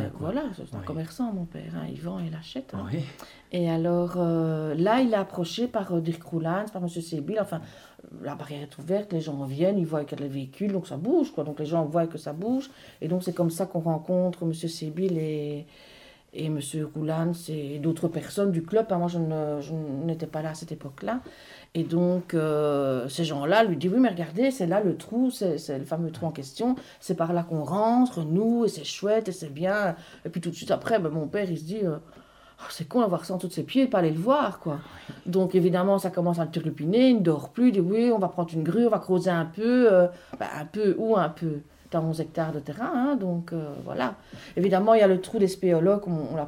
Mont-Saint-Guibert, Belgique - Fort Saint-Héribert
Fragment of an interview of Françoise Legros. Her father bought a forest and he acquired almost unexpectedly a massive undeground fortification. She explains what the foundation do, in aim to renovate this old place.